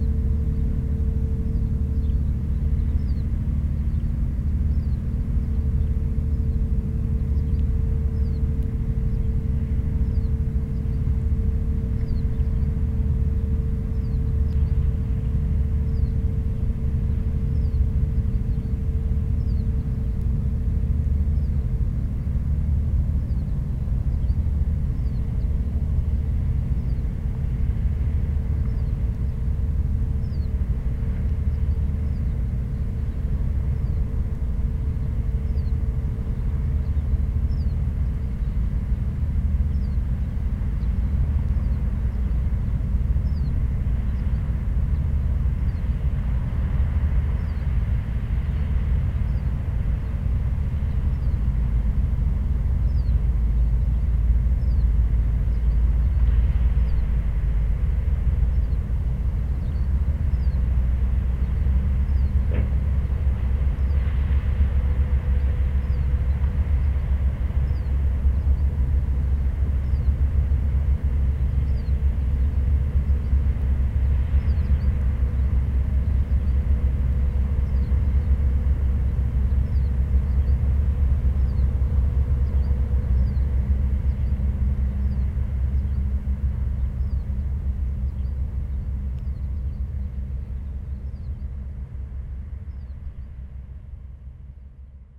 La Faute-sur-Mer, France - Fishing vessels
Fishing vessels going to the mussels, early on the morning during the very low tide. The sound is deaf.